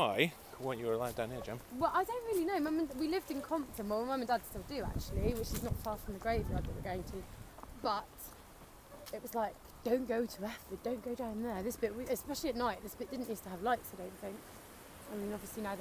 Efford Walk Two: About not walking Roman path - About not walking Roman path